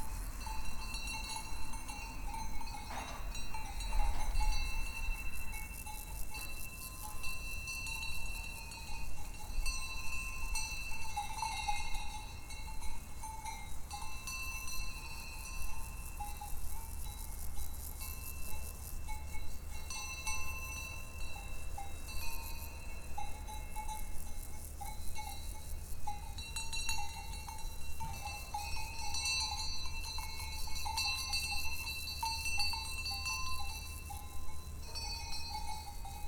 Saint-François-de-Sales, France - Quelques cloches de vaches
Quelques vaches dans une prairie, les insectes dans les herbes.